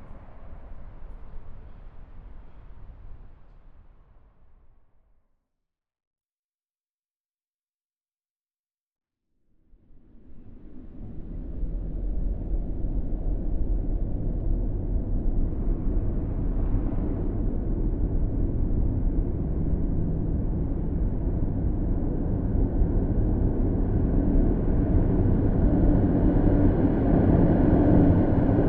Recording from under the bridge of trams passing above.
Recorded with Sony PCM D100 internal mics.
województwo małopolskie, Polska, 5 December 2017, 16:30